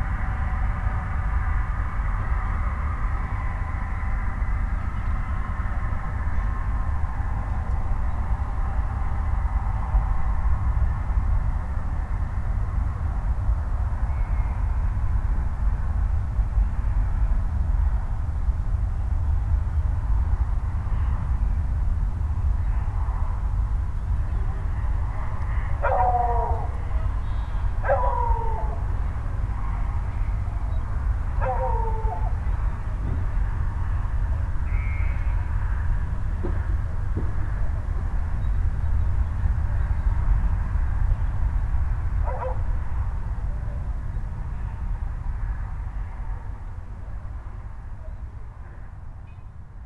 berth of marina restaurant, sheep vs. road drone
the city, the country & me: july 31, 2015
Workum, Netherlands